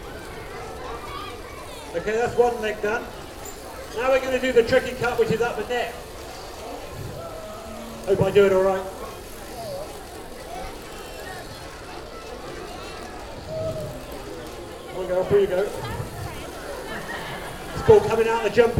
The Lambing Shed, Amners Farm, Burghfield, UK - Sheep shearing demonstration
This the sound of Chris Webber demonstrating how a sheep is sheared. The sheep in question is a North Country Mule crossed with a Suffolk ram. The flock on this farm is full of wonderful sturdy little meat sheep, with incredible mothers, who produce many lambs and a lovely thick, strong woolly fleece. Chris said the Wool Marketing Board currently pay £5 per fleece which is very encouraging to hear. The demonstration was so interesting and inspiring - to me the skill involved in shearing a sheep is no ordinary task and I never tire of watching the process. Keeping the sheep docile and turning it around, all the while working over it with the buzzing clippers (that you can hear in this recording) and somehow managing to not cut the skin, look to me like a real feat. This is how all the wool in our jumpers is obtained - through this action - and it's beautiful to see it being well done.